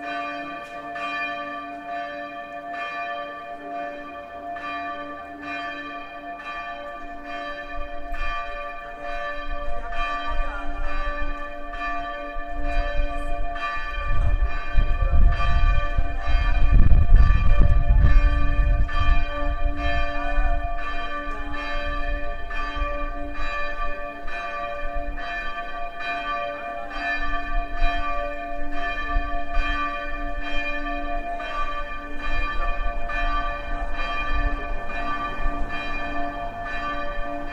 Sv. Salvator church - Sv. Salvator
Bells ringing at the end of Sunday morning Mass
Praha-Praha, Czech Republic, 14 June 2015